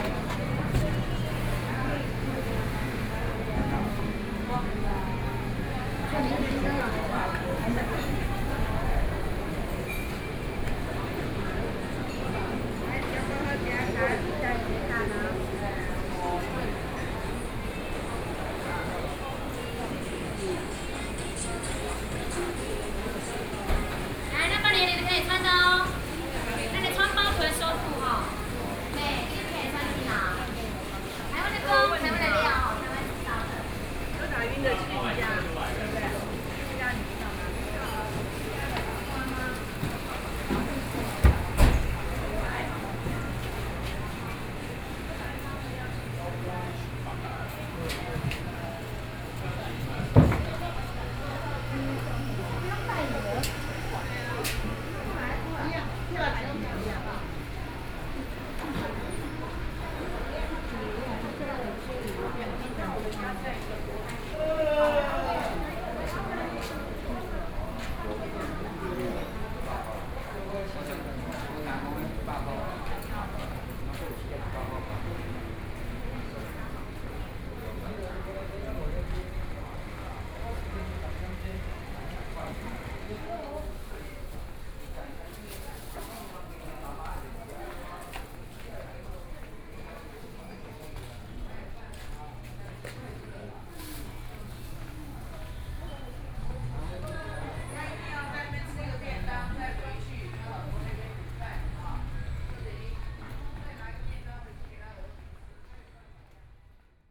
Neili, Taoyuan County - Traditional Market
walking in the Traditional Market, Sony PCM D50 + Soundman OKM II
Zhongli City, Taoyuan County, Taiwan, 16 September